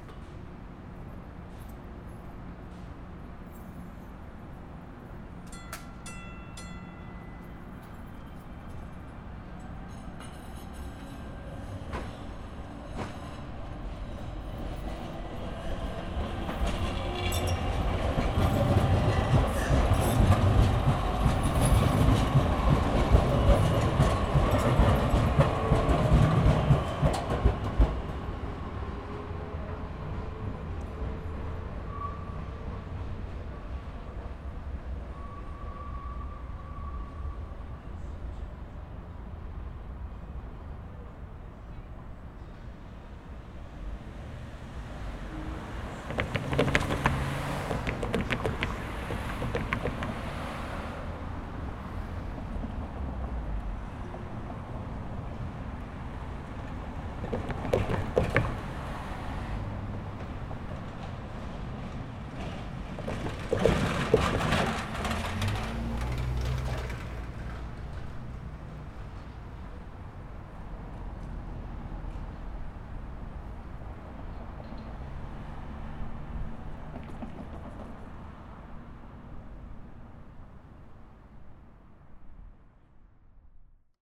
Station Building, Cathedral Street, Baltimore, MD - Light Rail and Traffic
A recording of Baltimore's Light Rail public transit as well as local traffic driving over the Light Rail tracks. Sounds from the nearby demolition preparation at the Dolphin Building can be heard as well. This was recorded using a Zoom H4n recorder.